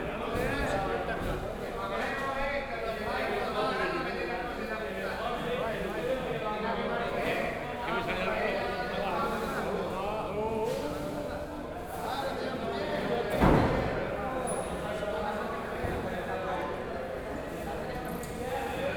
Fuengirola, España - Subasta de Pescado / Fish Auction
La clásica forma de subastar el pescado a voces ha sido remplazada por la subasta electrónica pero los gritos y las típicas formas de subastar pescado siguen presentes / The classical way of auction by voice now is replaced by electronic biding but the shouts and the typical manners on a fish auction still remains
Fuengirola, Spain